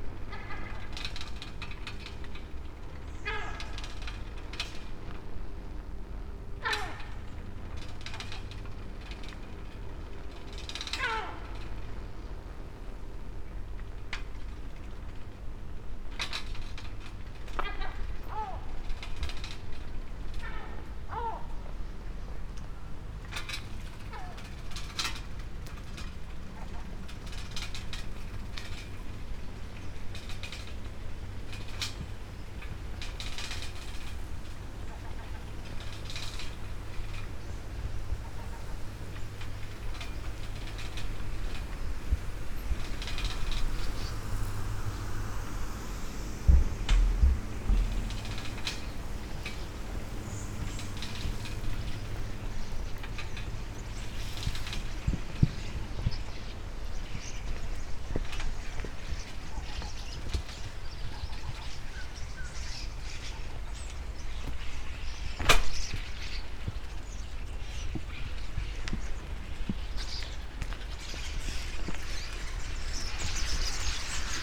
ride and walk at dawn, streets sonic scape with seagulls and air conditioners, pine trees and sea side sounds at the time, when light is purple blue, thousands of birds overnighting on old pine trees
Istarska županija, Hrvatska, 18 July 2013, 04:54